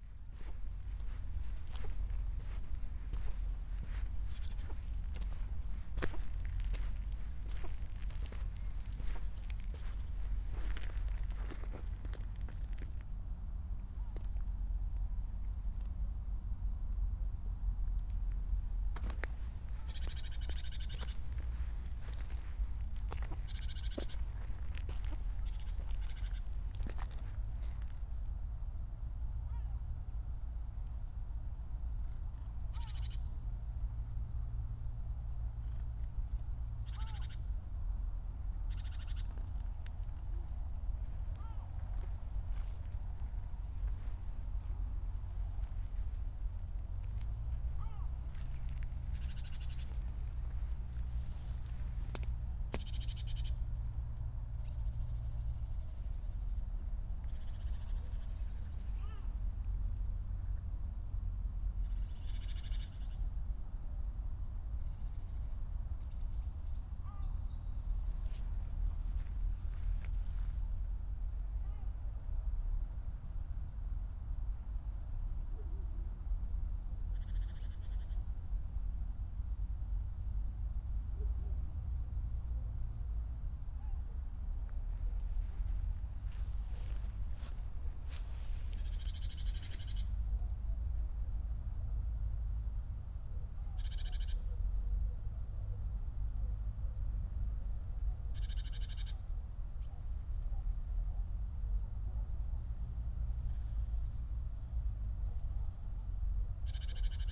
muddy path and already wet shoes, birds sing and flutter, river gull and hydro power plant from afar
Markovci, Slovenia, 15 November 2012, 15:51